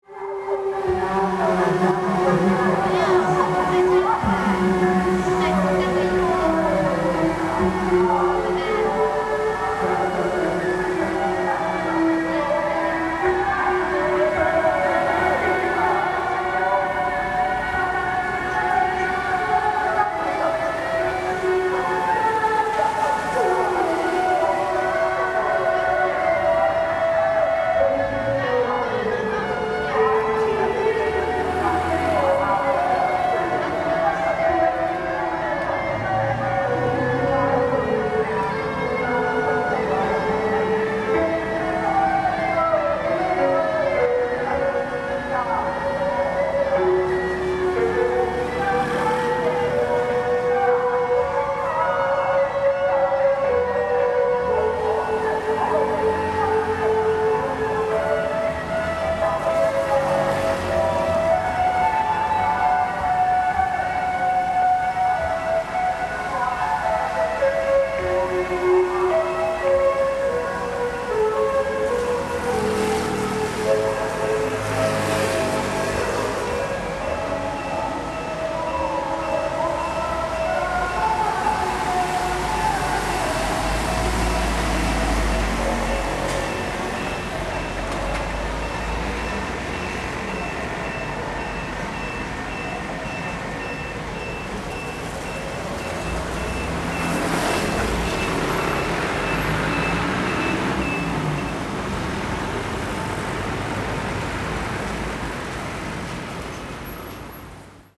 Funeral team
Sony Hi-MD MZ-RH1 +Sony ECM-MS907